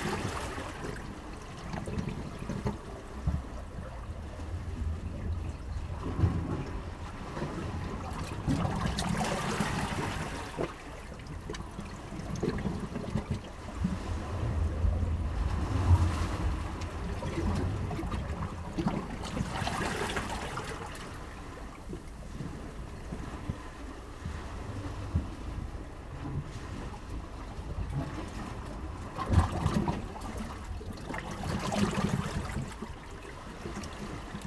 East Pier, Whitby, UK - Breakwater cistern ...
Breakwater cistern ... East Pier Whitby ... open lavalier mics clipped to sandwich box ... small pool between boulders filling up and emptying with a different rhythm to the tide ...
August 2017